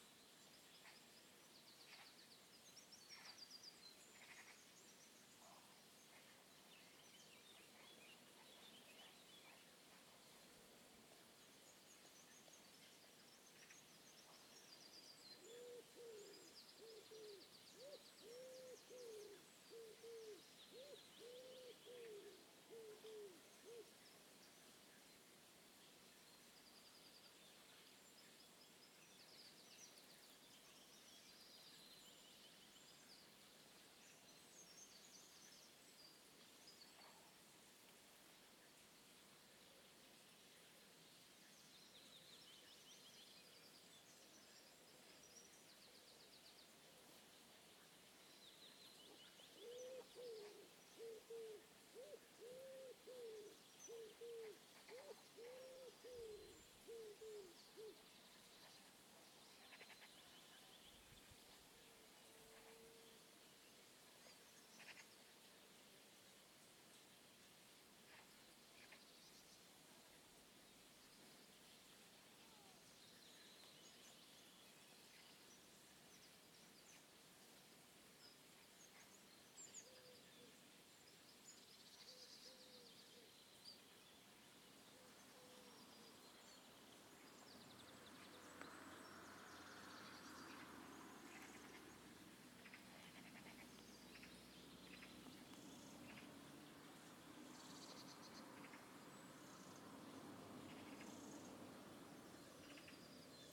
Field Recordings taken during the sunrising of June the 22nd on a rural area around Derriaghy, Northern Ireland
Zoom H2n on XY
Lisburn, UK, 22 June, ~07:00